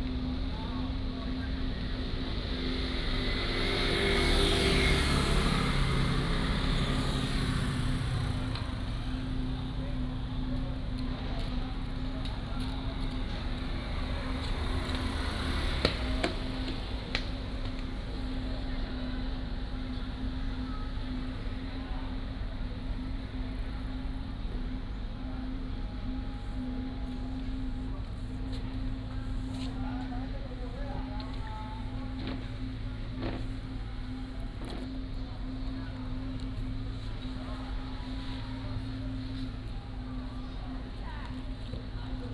白沙尾觀光港, Hsiao Liouciou Island - In the next port
In the next port
Liuqiu Township, 觀光港路